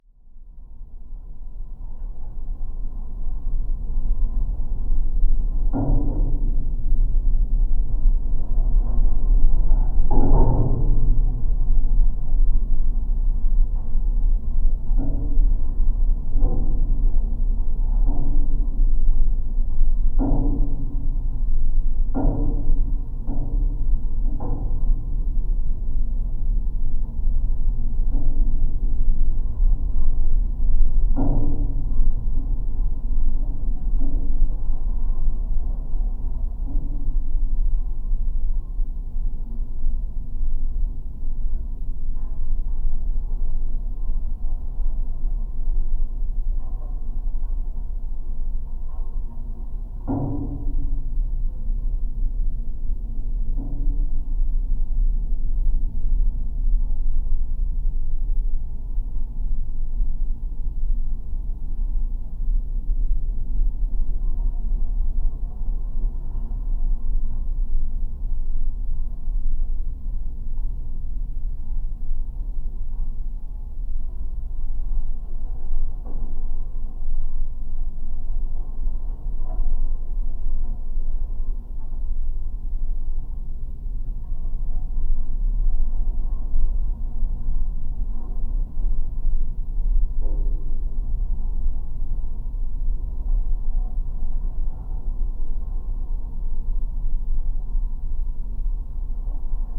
abandoned metallic tower with lamp. geophone recording - low frequencies
14 August 2022, 18:10